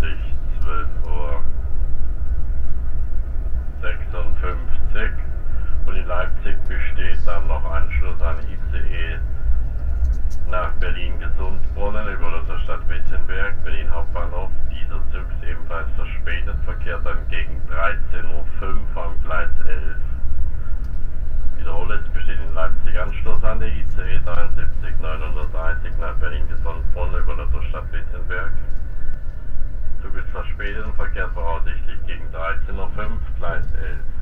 in the train, approaching leipzig, announcement
soundmap d: social ambiences/ listen to the people - in & outdoor nearfield recordings